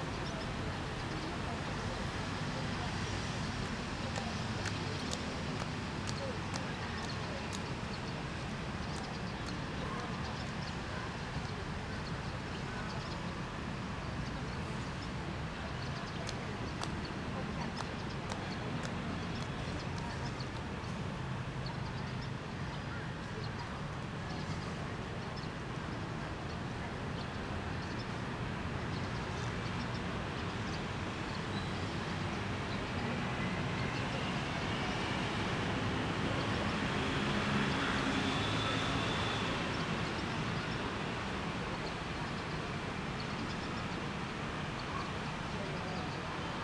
Taiwan, Hsinchu City, East District, 中央路112巷23號 - Hopping Boy
A boy hops around in the courtyard behind the Hsinchu City Art Gallery and the tax bureau building. Also sounds of early evening traffic. Stereo mics (Audiotalaia-Primo ECM 172), recorded via Olympus LS-10.